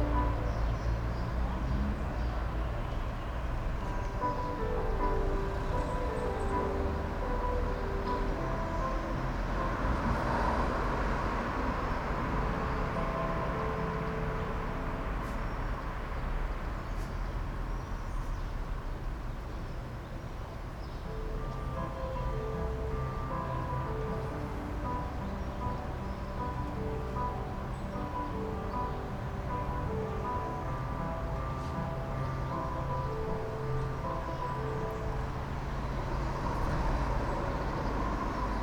park window - musicians in pavilion, birds, aeroplane, car traffic ...
warm june morning ambience in the park, musicians tuning for 11 o'clock performance, song from childhood movie ”sreča na vrvici / meets on a leash"
Maribor, Slovenia, 8 June 2014, ~12:00